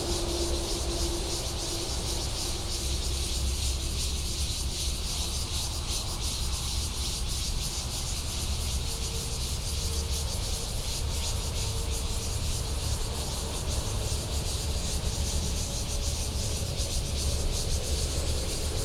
next to the highway, Traffic sound, In the park, Cicadas
Taoyuan City, Taiwan, July 2017